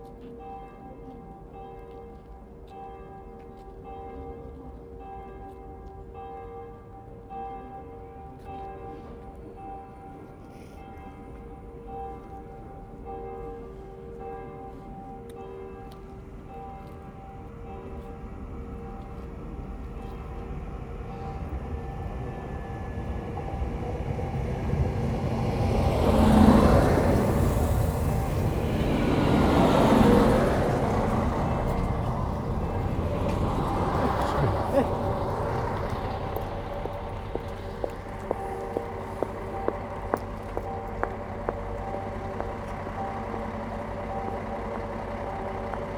Bernau, Bernau bei Berlin, Germany - Bernau Hauptbahnhof: 6.00pm bells and station announcements
6.00pm bells and station atmosphere while waiting for a train. Cold January.